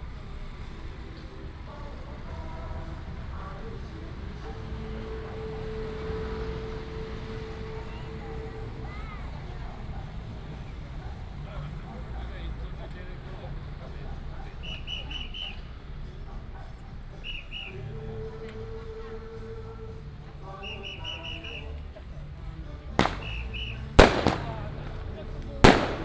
新吉里, Huwei Township - Firecrackers and fireworks
temple fair, Baishatun Matsu Pilgrimage Procession, Firecrackers and fireworks